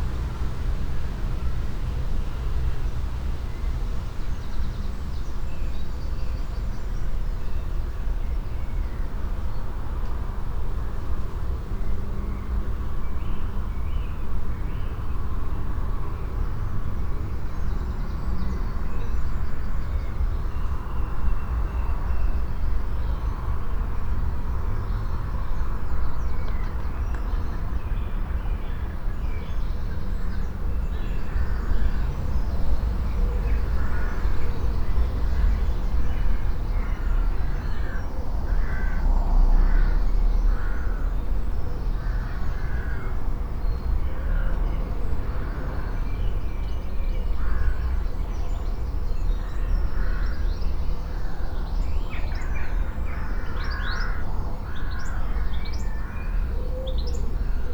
An experiment with longer recordings allowing the listener to engage more completely with the location.
MixPre 6 II with 2 x Sennheiser MKH 8020s.